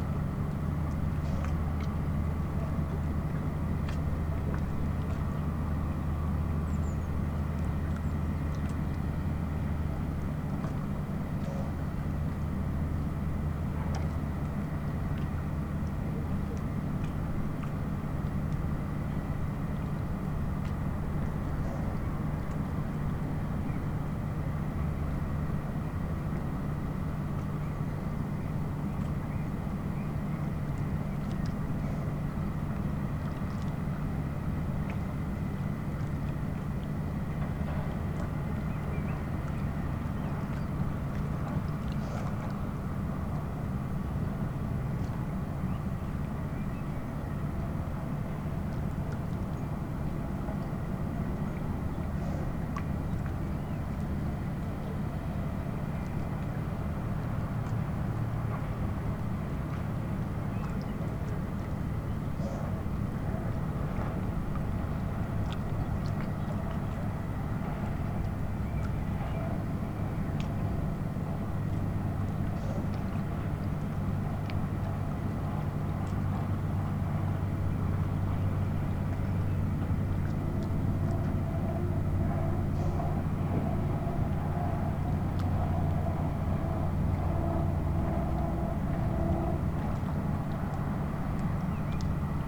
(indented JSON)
{
  "title": "lemmer, vuurtorenweg: parkplatz - the city, the country & me: parking area vis-à-vis of a concrete factory",
  "date": "2011-06-20 19:33:00",
  "description": "noise of the concrete factory, carillon, lapping waves, birds\nthe city, the country & me: june 20, 2011",
  "latitude": "52.84",
  "longitude": "5.71",
  "altitude": "4",
  "timezone": "Europe/Amsterdam"
}